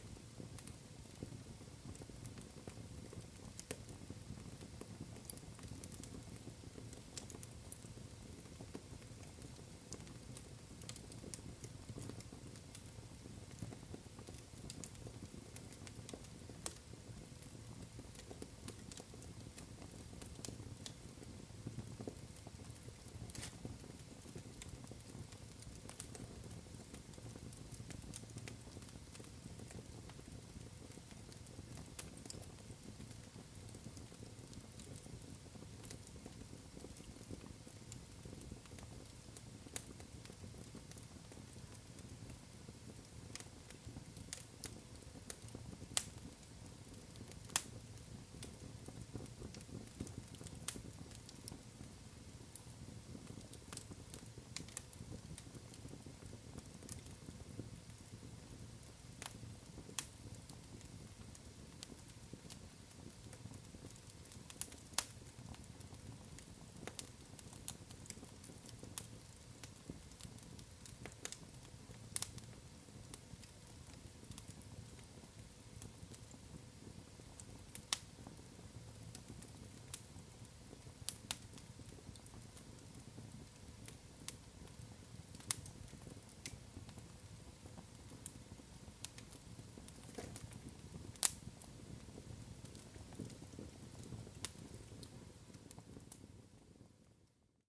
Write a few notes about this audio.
inside recording. stafsäter recordings. recorded july, 2008.